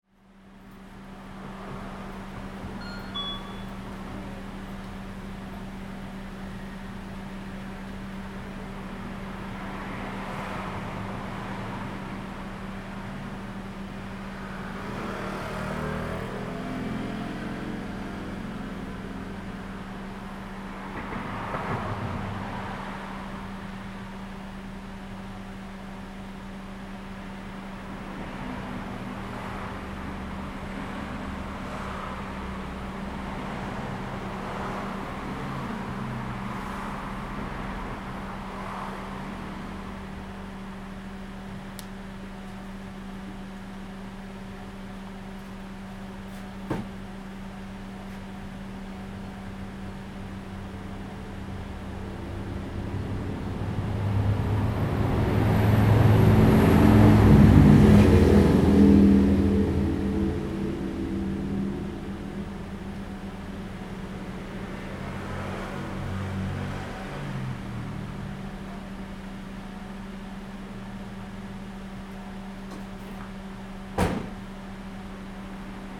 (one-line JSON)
{"title": "金崙村, Taimali Township - Small village", "date": "2014-09-05 11:32:00", "description": "In front of the convenience store, Hot weather, Traffic Sound, Small village\nZoom H2n MS+XY", "latitude": "22.53", "longitude": "120.96", "altitude": "29", "timezone": "Asia/Taipei"}